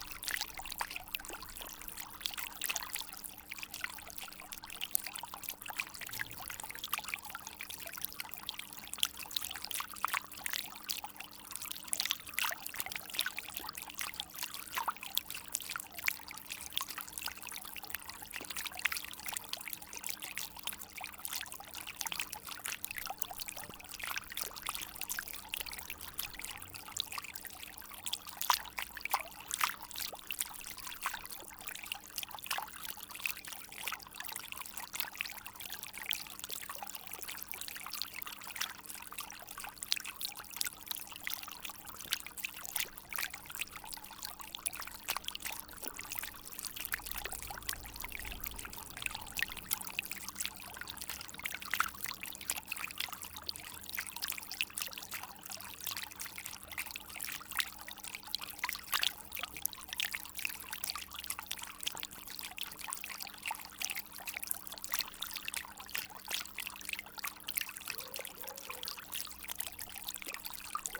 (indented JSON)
{
  "title": "Gyumri, Arménie - Armenia, country of free water",
  "date": "2018-09-09 14:00:00",
  "description": "They tell : Armenia is the country of free water. In fact, there's fountains absolutely everywhere, and everybody go there. They drink a few water and continue walking. It was important to record at less one of these fountains.",
  "latitude": "40.79",
  "longitude": "43.84",
  "altitude": "1533",
  "timezone": "GMT+1"
}